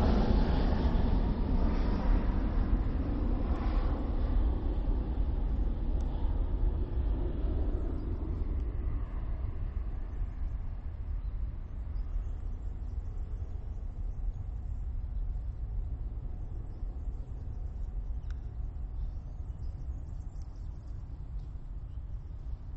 R. Murtas, Lisboa, Portugal - Hortas Comunitárias de Alvalade
In this place were created community kitchen gardens.
3 December 2018, 10:15